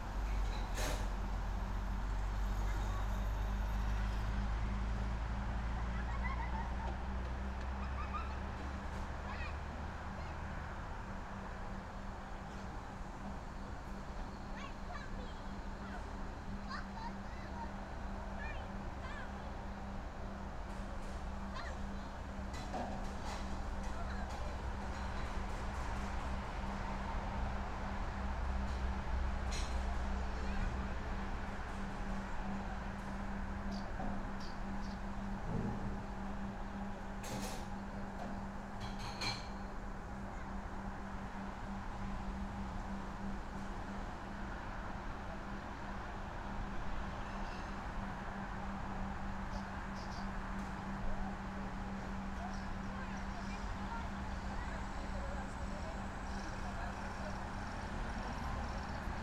Vidukle, Lithuania, motel window
small omni microphones through open motel window